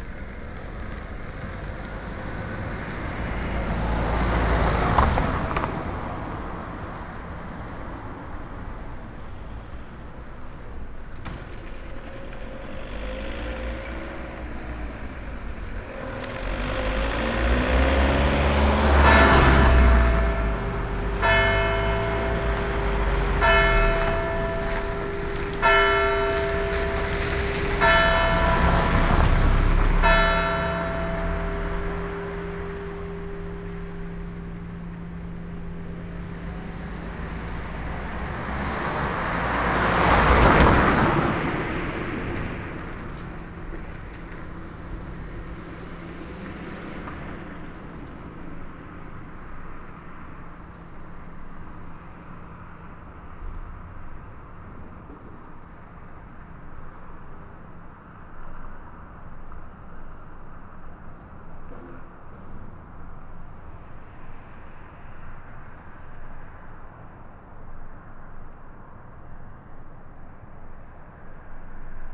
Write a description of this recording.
Christmas evening but no mass in the village. SD-702/Me-64 NOS.